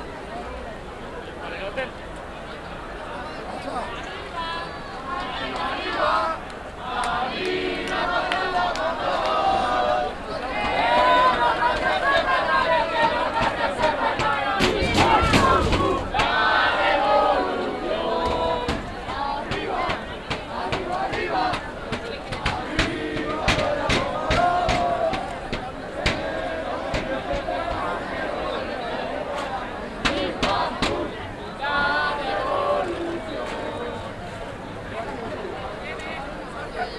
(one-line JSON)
{"title": "Sol, Madrid, Spain - 2014-06-02 Poner el cuerpo", "date": "2014-06-02 21:15:00", "description": "2014-06-02. Manifestation to celebrate the Abdication of King Juan Carlos. A group of youths block the gate of the metro station in order to keep it open for more activists.", "latitude": "40.42", "longitude": "-3.70", "altitude": "665", "timezone": "Europe/Madrid"}